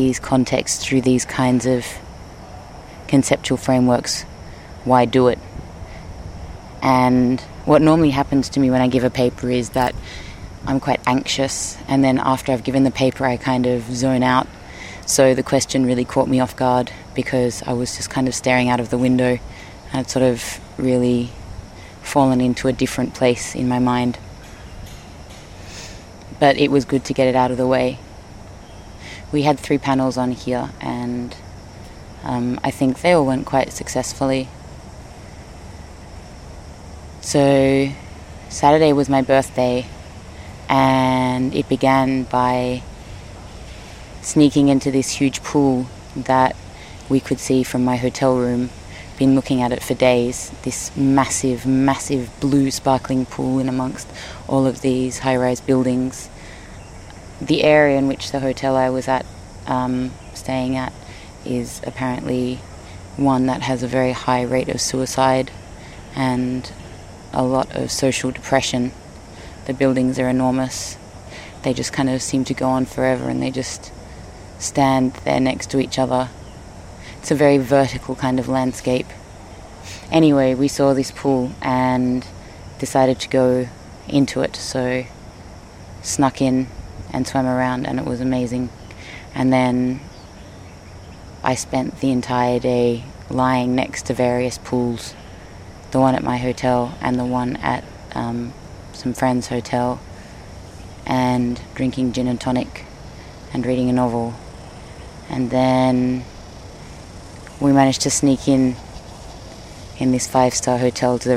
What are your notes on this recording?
lingnan university, cats, cicadas, bow tie